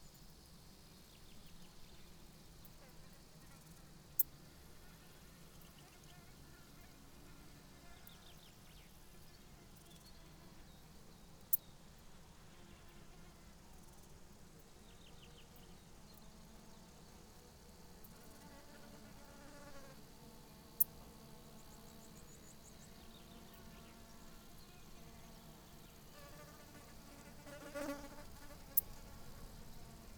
I placed the microphones in low bushes, 30 cm. Altitude 1548 m.
Lom Uši Pro, MixPreII